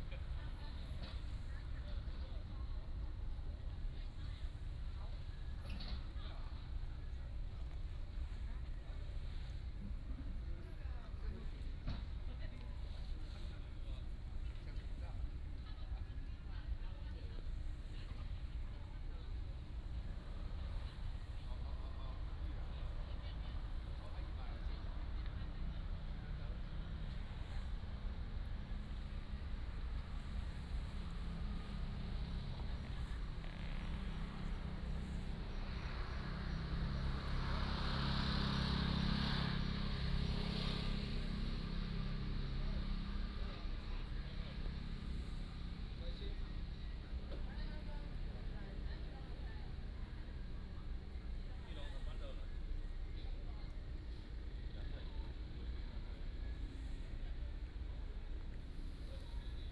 復興里, Magong City - Night in the park
Night in the park